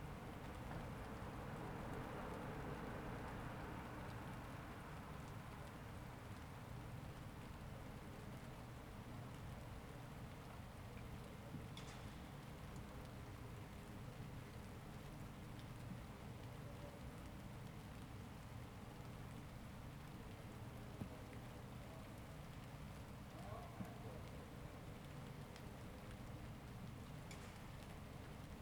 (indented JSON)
{
  "title": "Ascolto il tuo cuore, città. I listen to your heart, city. Several chapters **SCROLL DOWN FOR ALL RECORDINGS** - Round midnight with light rain in the time of COVID19 Soundscape",
  "date": "2020-06-08 23:42:00",
  "description": "\"Round midnight with light rain in the time of COVID19\" Soundscape\nChapter CIII of Ascolto il tuo cuore, città, I listen to your heart, city\nMonday, June 8th – Tuesday June 9th 2020. Fixed position on an internal terrace at San Salvario district Turin, ninety-one days after (but day thirty-seven of Phase II and day twenty-four of Phase IIB and day eighteen of Phase IIC) of emergency disposition due to the epidemic of COVID19.\nStart at 11:42 p.m. end at 00:01 a.m. duration of recording 19'22''.",
  "latitude": "45.06",
  "longitude": "7.69",
  "altitude": "245",
  "timezone": "Europe/Rome"
}